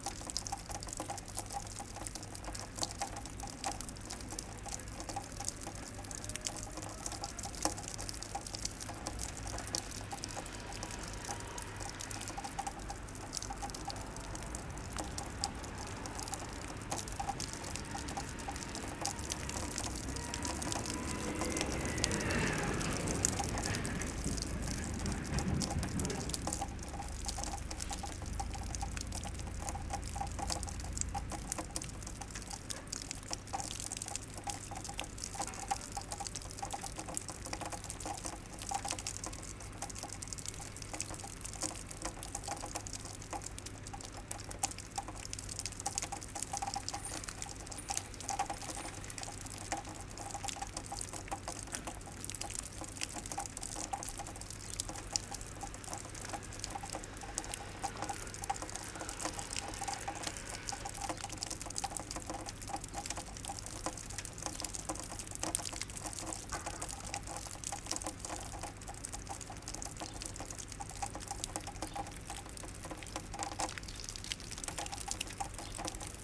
La Rochelle, France - Its raining
A constant rain is falling on La Rochelle this morning. Water is falling from a broken roofing.
26 May